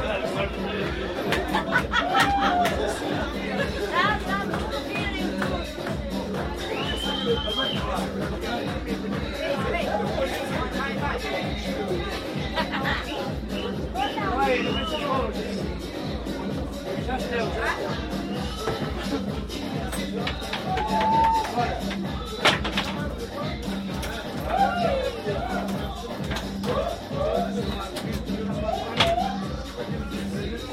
{"title": "carroça. portugal-spain border (A.Mainenti)", "latitude": "40.61", "longitude": "-6.84", "altitude": "767", "timezone": "Europe/Berlin"}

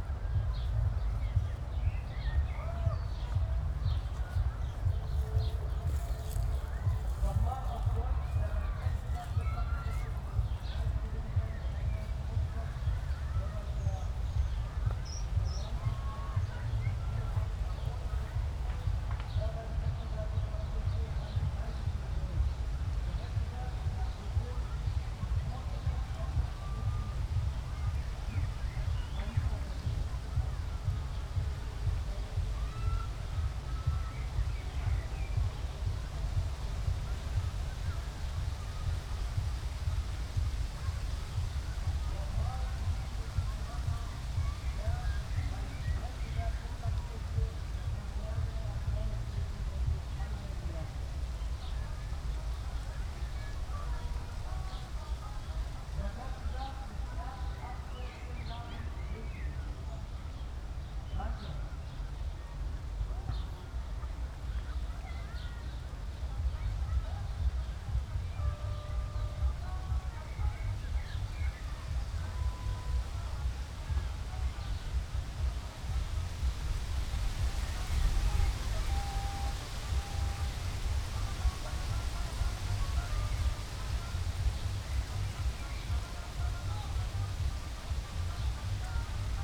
{"title": "Tempelhofer Feld, Berlin, Deutschland - distant music from carnival of cultures", "date": "2019-06-09 16:55:00", "description": "the soundscape today is dominated by distant music from Karneval der Kulturen, and some wind\n(Sony PCM D50, Primo EM172)", "latitude": "52.48", "longitude": "13.40", "altitude": "42", "timezone": "Europe/Berlin"}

